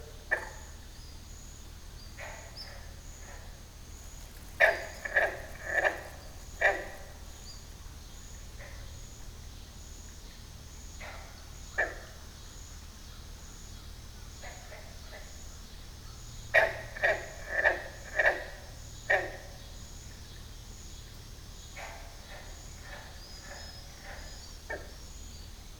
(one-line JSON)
{"title": "Union Star Rd, West Fork, AR, USA - Late Afternoon under a bridge", "date": "2021-07-25 06:00:00", "description": "Lazy, late afternoon recording from under a bridge as day turns into evening in West Fork, Arkansas. It's about 88 degrees F. There's a small stream running through the large, open-ended concrete box of the bridge. Birds, insects, surprising frogs in the middle, evening cicada chorus starting to come on at the end of the recording. Occasional cars driving overhead and distant propeller planes.", "latitude": "35.89", "longitude": "-94.21", "altitude": "489", "timezone": "America/Chicago"}